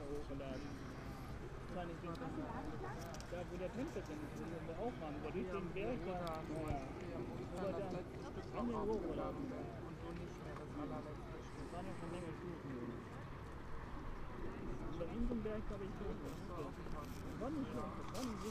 Dahlwitz-Hoppegarten, Hoppegarten, Deutschland - people cake weather park
On the final day of the land art / public objects exhibition of the "Endmoräne" artist group at the Lenné - Park in Hoppegarten, their combined voices make a phantastic surreal radiopiecelet, together with the natural sound environment of the park around us.
Brandenburg, Deutschland, 23 June 2013, ~16:00